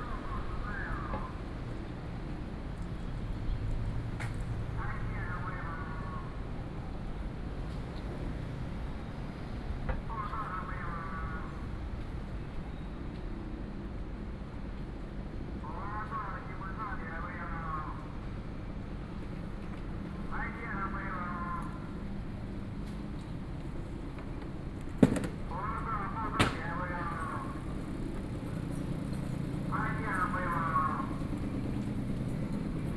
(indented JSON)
{
  "title": "Ln., Sec., Roosevelt Rd., Da’an Dist., Taipei City - Walking in the Small alley",
  "date": "2015-07-21 08:48:00",
  "description": "Walking in the Small alley",
  "latitude": "25.03",
  "longitude": "121.52",
  "altitude": "9",
  "timezone": "Asia/Taipei"
}